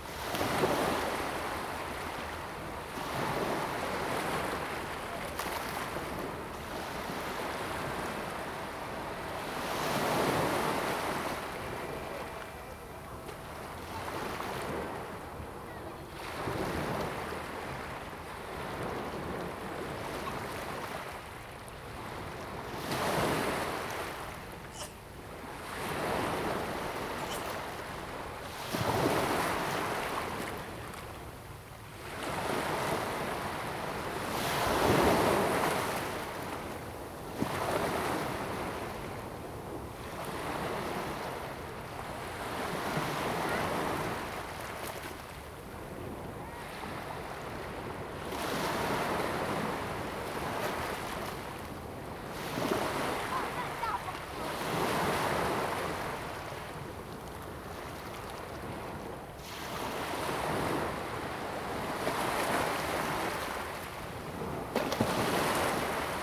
中澳沙灘, Hsiao Liouciou Island - Small beach
Small beach, The sound of waves and tides, Yacht whistle sound
Zoom H2n MS +XY
Pingtung County, Liuqiu Township, 觀光港路30號, 1 November 2014, 16:41